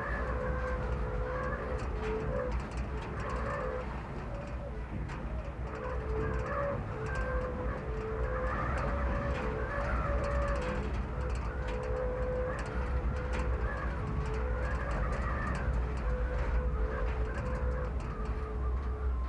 Unnamed Road, Nozakigō, Ojika, Kitamatsuura, Nagasaki, Japan - Whistling Fence at the Onset of a Typhoon
Nozaki Jima is uninhabited so the fences once used to keep wild boar away from the crops are not maintained and many lie rusted and twisted from the wind and rain. This was recorded at the onset of a typhoon so the wind was especially strong.